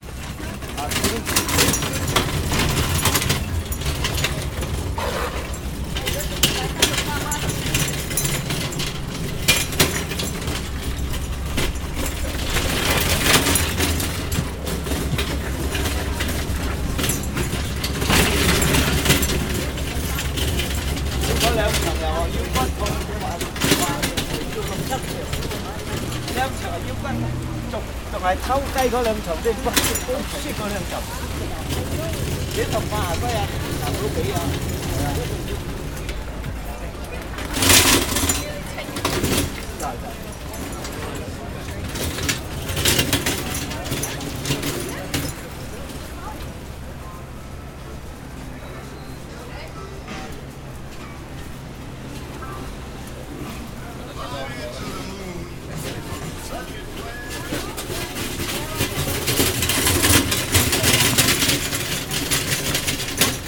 Mott St, New York, NY, USA - A drum being pushed through the streets of Chinatown, Year of the Dog
I'm walking next to a group of performers carrying a Chinese drum and a dragon costume through the streets of Chinatown, NY.
The sounds are coming from the wheels of the drum being pushed.
This group is going to Mott Street to perform and bless the local businesses.
Chinatown, NYC
Zoom H6
16 February 2018